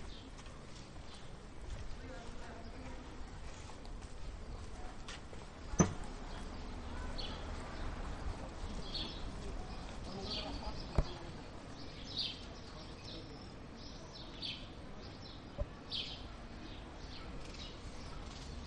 Bucharest WLD 2011
North Railway Station.Matache Market. Popa Tatu Str. Cismigiu Park.